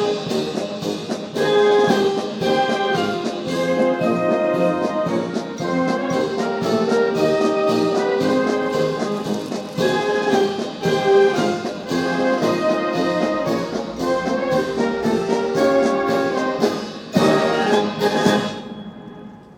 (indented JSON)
{
  "title": "Amalienborg Royal marches, København Denmark - Changing of the Amalienborg Royal guards",
  "date": "2013-09-26 12:10:00",
  "description": "A marching band accompanies the changing of the Royal guard at Amalienborg palace in Copenhagen. Tascam DR-100 with built in uni mics.",
  "latitude": "55.68",
  "longitude": "12.59",
  "altitude": "8",
  "timezone": "Europe/Copenhagen"
}